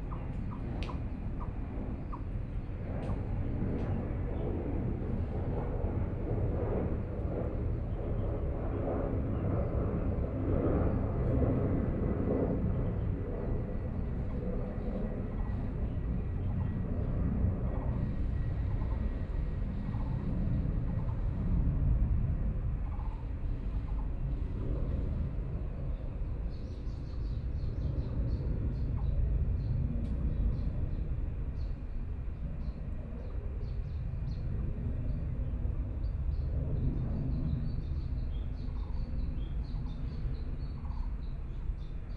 Sitting on a park bench high, Frogs sound, Aircraft flying through